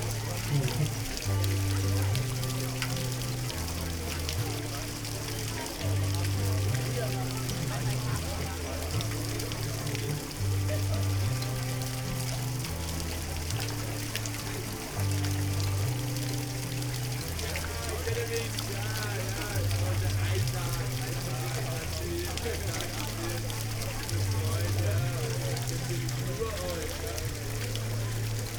Odonien, Hornstr., Köln - water sculpture, concert
Odonien, a self organized art space, Köln, metal sculpture fountain, one man concert in the background
(Sony PCM D50, Primo EM172)
Köln, Germany, 20 August 2015